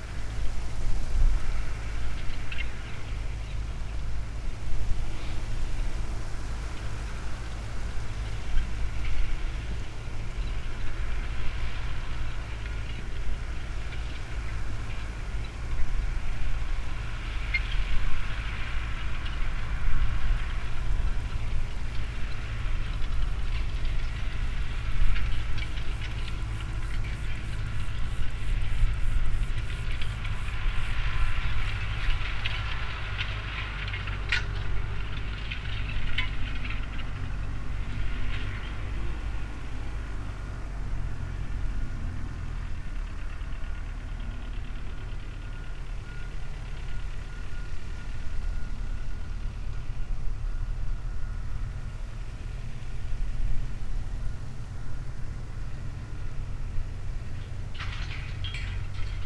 tractor doing its thing on the field in the distance. going back and forth, dragging some kind of farming contraption. (roland r-07)
wielkopolskie, Polska, 14 August 2019, ~12:00